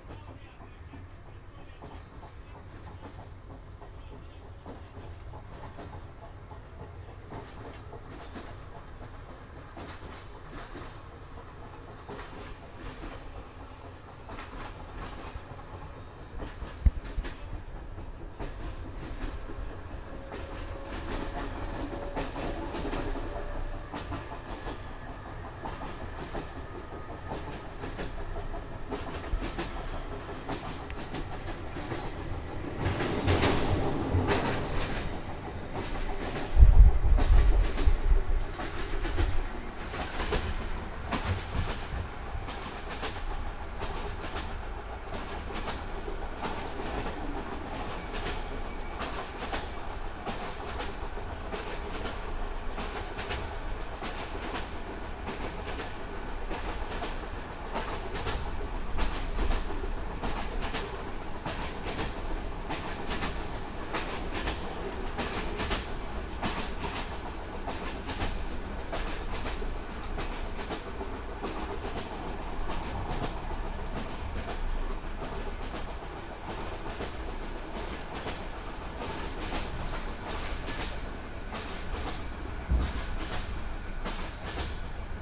Train leavung from Sofia to the seaside. recorded with zoom h1
Sofia, Bulgaria